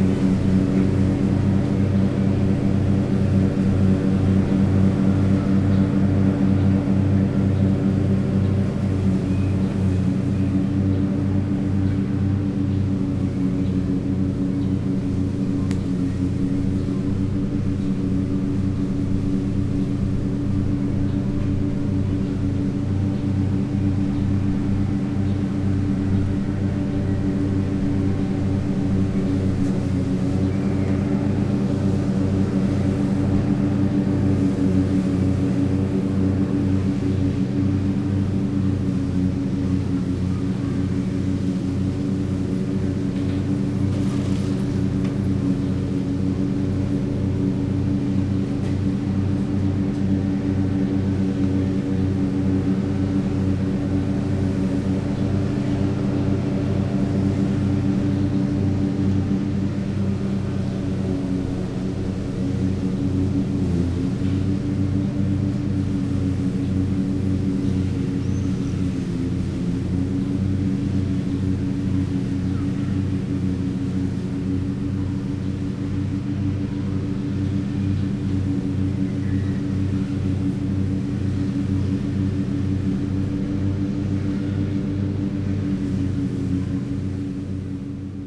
{
  "title": "Poznan, Jana III Sobieskiego housing estate - lawnmower action",
  "date": "2018-06-05 12:56:00",
  "description": "a soccer field has its grass trimmed. man riding a tractor lawnmower back and forth. kids playing in a nearby kindergarten. (sony d50)",
  "latitude": "52.46",
  "longitude": "16.91",
  "altitude": "103",
  "timezone": "Europe/Warsaw"
}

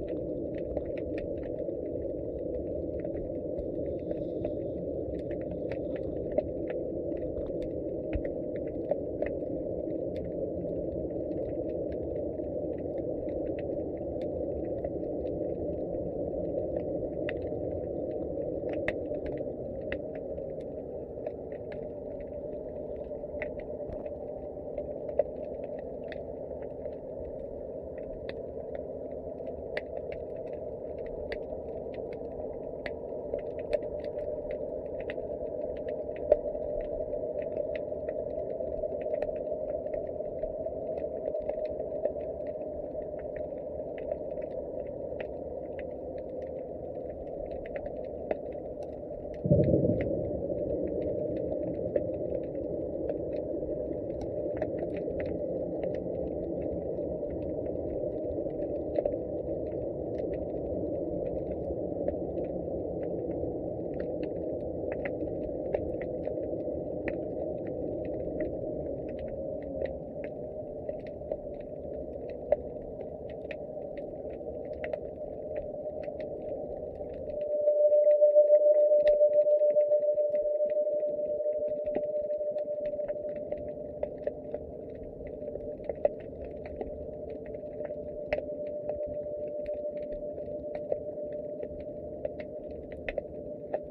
{
  "title": "sounds of the seaside / hypdrophone under pier.",
  "date": "2010-07-31 13:23:00",
  "description": "hypdrophone under Weymouth pier. Not sure what the haunting sound is, possible it is the sound of cars driving off the pier and onto the ferry.",
  "latitude": "50.61",
  "longitude": "-2.44",
  "altitude": "1",
  "timezone": "Europe/London"
}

